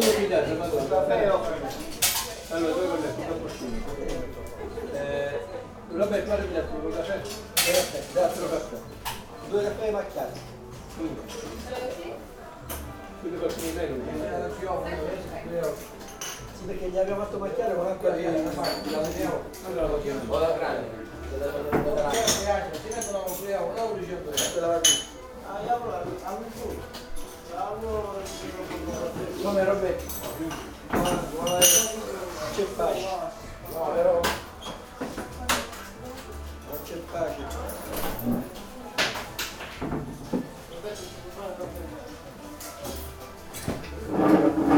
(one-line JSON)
{"title": "lipari harbour - bar moby dick", "date": "2009-10-24 09:20:00", "description": "the moby dick coffee bar fills with people awaiting the arrival of the big nave ferry.", "latitude": "38.47", "longitude": "14.96", "altitude": "6", "timezone": "Europe/Berlin"}